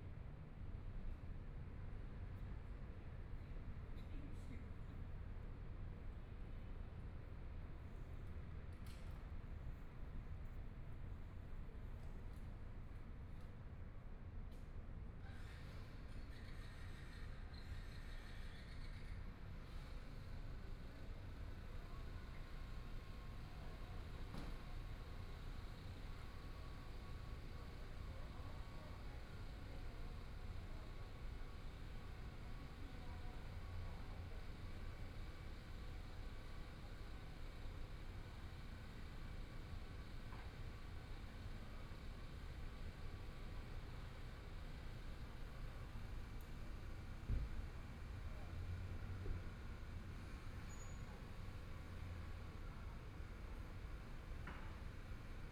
SongJin 2 Park, Taipei City - in the Park
Community-park, Sitting in the park, Traffic Sound, Motorcycle sound
Binaural recordings, ( Proposal to turn up the volume )
Zoom H4n+ Soundman OKM II